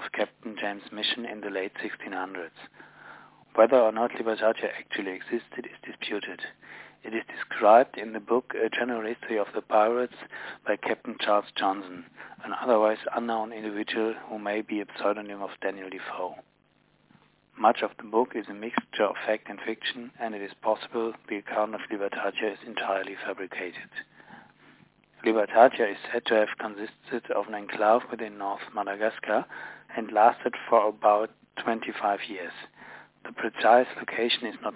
Libertatia - Libertatia, Wikipedia
Libertatia, a free colony founded by Captain Mission in the late 1600s